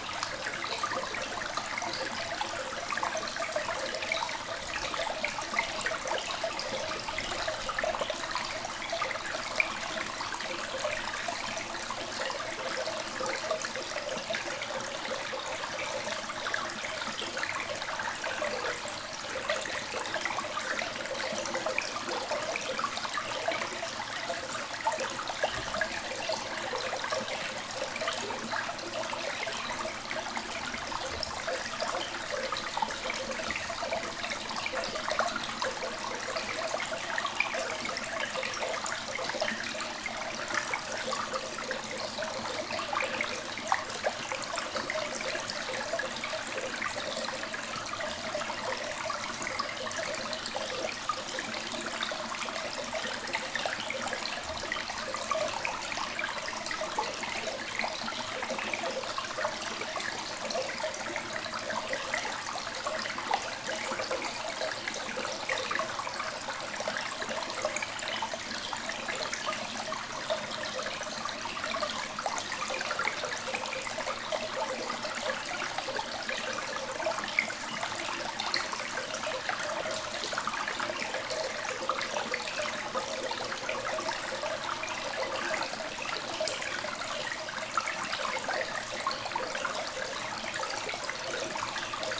Into a cement underground mine, a small river is flowing. It's going in an hole, what we call in spelunking french word "a loss".